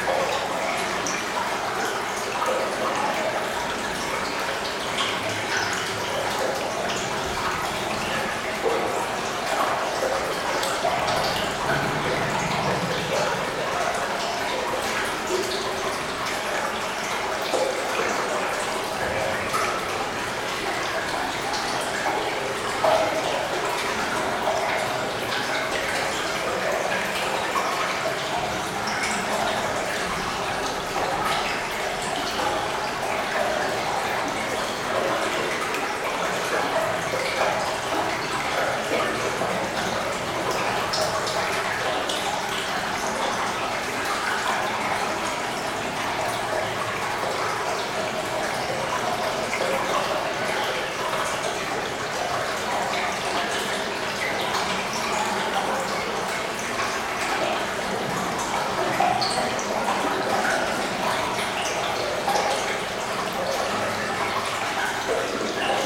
Esch-sur-Alzette, Luxembourg - Mine ambience
Water quietly flowing in a lost and abandoned tunnel in the Cockerill mine. Abiance of this place is very solitary.